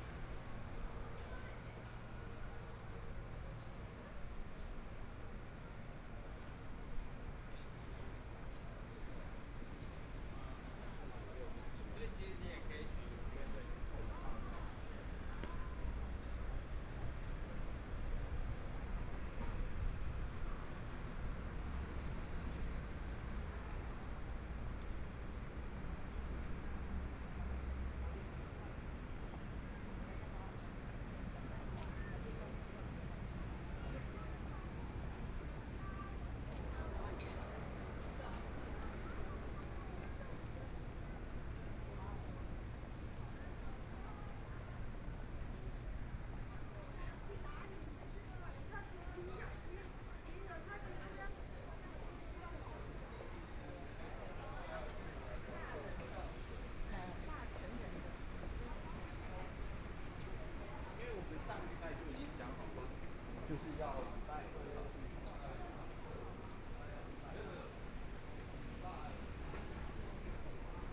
聚葉里, Zhongshan District - soundwalk
Walking through the different streets, Traffic Sound, Motorcycle sound, Various shops voices, Binaural recordings, Zoom H4n + Soundman OKM II